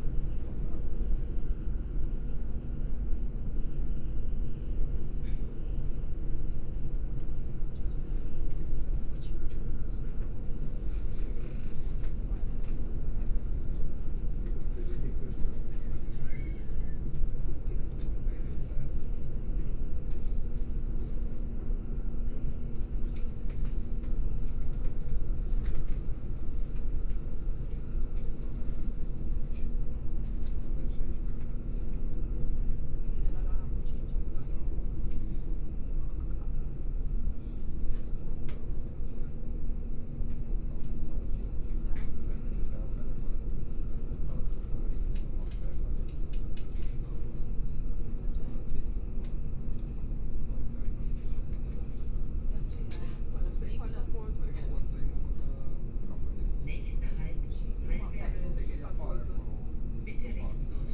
Hallbergmoos, Germany - S Bahn S8
S- Bahn, Line S8, In the compartment
6 May 2014